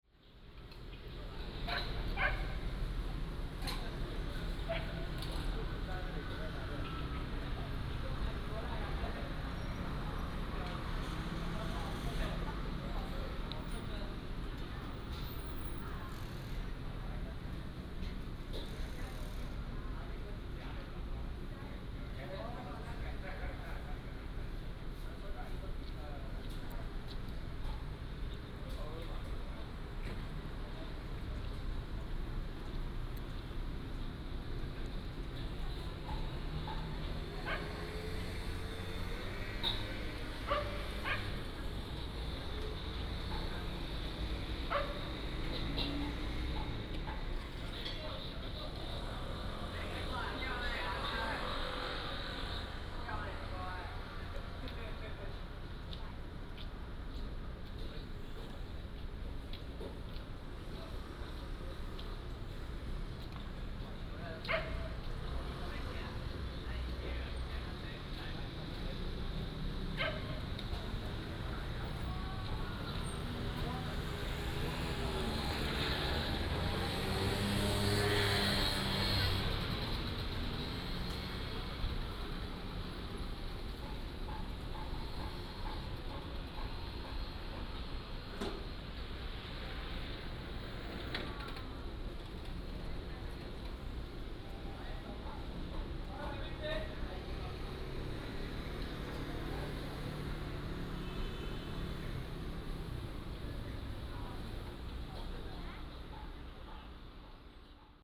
Heping Rd., Minxiong Township - Outside the station
Outside the station, The sound of the kitchen, Dog barking, Traffic sound